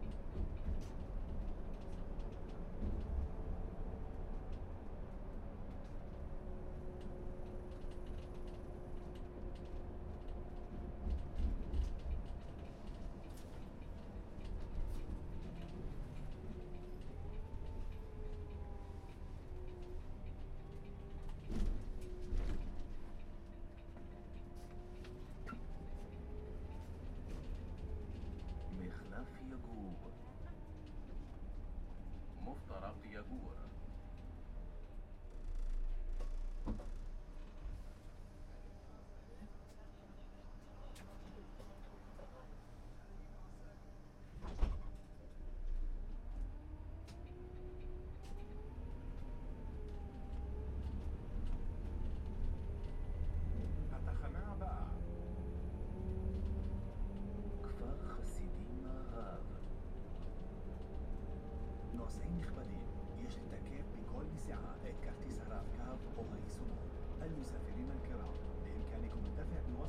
yagur junction - bus ambience
inside a walking bus, bus stop announcing sounds, someone getting out, and beatbox attempts